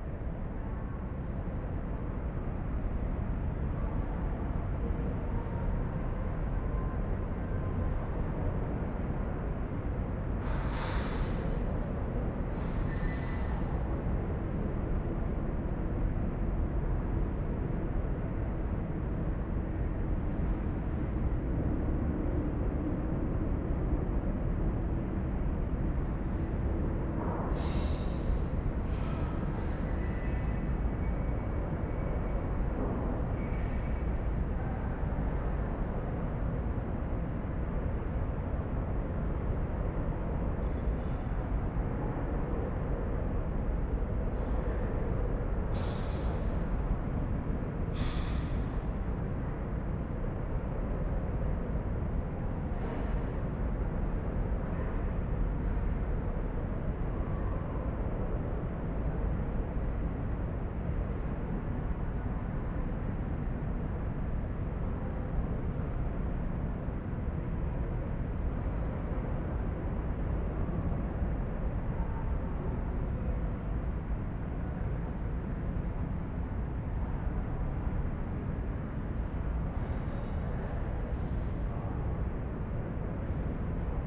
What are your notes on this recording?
Inside the under earth exhibition hall near the entry. The sound of the Rheinufertunnel traffic reverbing in the tube like architecture construction. Also to be heard: sounds from the cafe kitchen above. This recording is part of the intermedia sound art exhibition project - sonic states, soundmap nrw - sonic states, social ambiences, art places and topographic field recordings